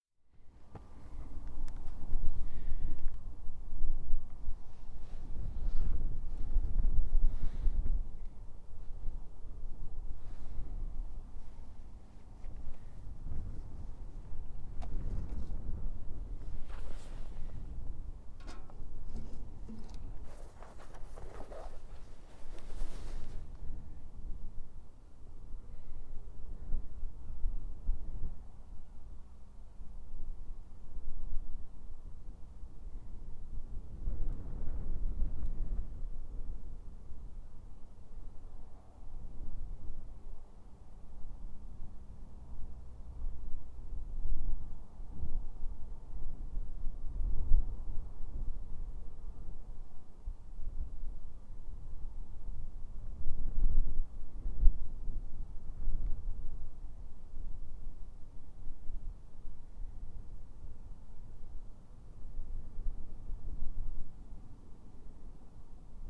I waited for quite a long time for the 3.30 from Romney to pull into view; I wanted to record its lonesome whistle ringing out against the bleak and treeless sky. But the train never came. Instead I found myself leaning on the station fence and listening to the dull drone of the power station, the shifting wind, the emptiness and the quiet. The occasional seagull chips in but the main sounds here are of the wind whipping about. Dungeness reminds me of the Wild West somehow. And standing at the station listening intently to the quiet sounds, I felt like I was in that amazing movie - Once upon a Time in the West. Mark and I kept singing the harmonica refrain from that film to each other as we traipsed back, no train to be found.